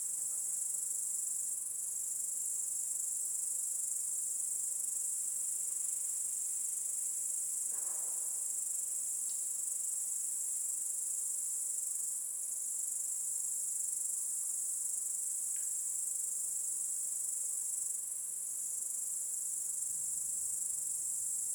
WLD cicadas under a bridge, south Estonia
cicadas and an occasional fish sound under a bridge by the Ahja river